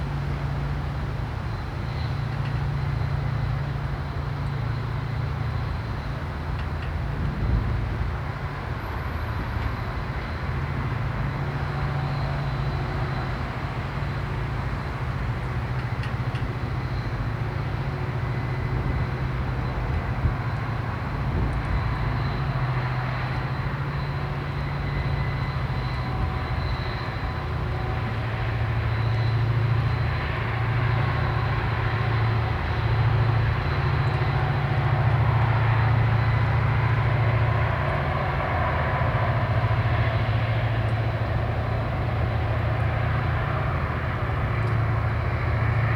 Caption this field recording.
Jetty. waves, distant industry, traffic, passing ship